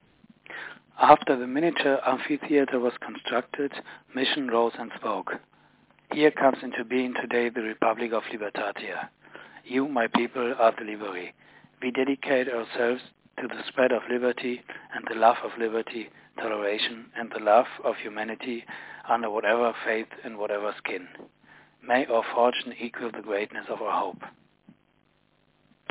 Libertatia - A General History of the Pyrates, Charles Johnson
Libertatia, a free colony founded by Captain Mission in the late 1600s
Madagascar